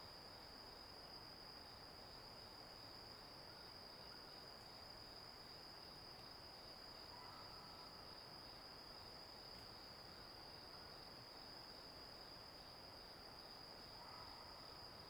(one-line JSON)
{"title": "達保農場, 達仁鄉, Taitung County - Early morning in the mountains", "date": "2018-04-06 05:04:00", "description": "Early morning in the mountains, Insect noise, Stream sound, Birds sound\nZoom H2n MS+XY", "latitude": "22.45", "longitude": "120.85", "altitude": "241", "timezone": "Asia/Taipei"}